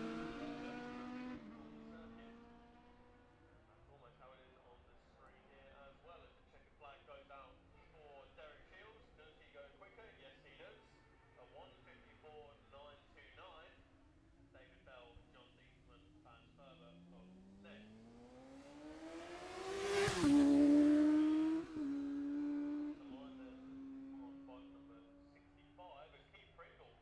Jacksons Ln, Scarborough, UK - gold cup 2022 ... classic s'bikes practice ...
the steve henshaw gold cup ... classic superbikes practice ... dpa 4060s on t'bar on tripod to zoom f6 ...
16 September 2022